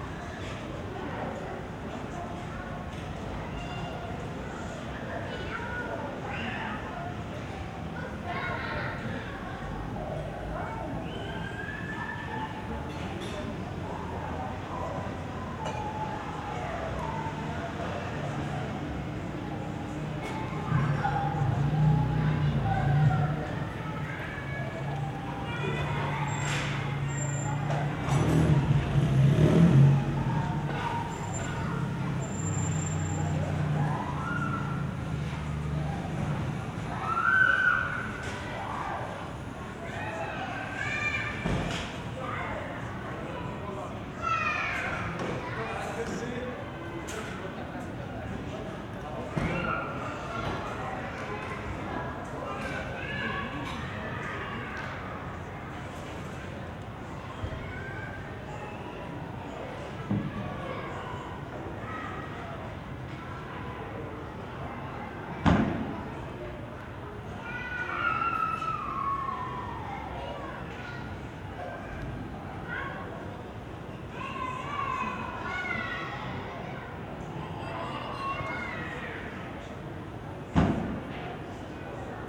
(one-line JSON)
{"title": "Michael-Bohnen-Ring, Neukölln, Berlin - residential area ambience", "date": "2012-08-23 20:05:00", "description": "High-Deck, multi cultural residential area from the 70/80s, pedestrian areas are above street levels, ambience on a late summer evening.\n(SD702, Audio Technica BP4025)", "latitude": "52.47", "longitude": "13.48", "altitude": "36", "timezone": "Europe/Berlin"}